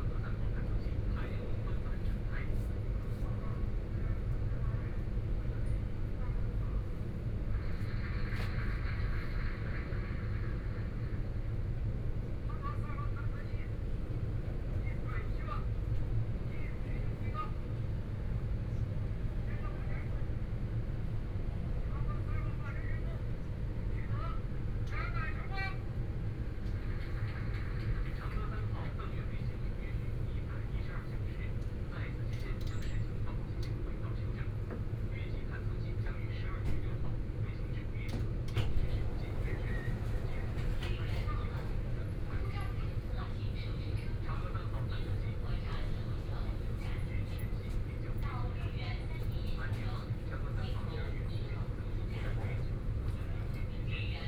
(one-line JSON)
{"title": "Huangpu District, Shanghai - Line 10 (Shanghai Metro)", "date": "2013-12-02 13:04:00", "description": "from East Nanjin Road Station to Laoximen Station, Binaural recordings, Zoom H6+ Soundman OKM II", "latitude": "31.23", "longitude": "121.48", "altitude": "11", "timezone": "Asia/Shanghai"}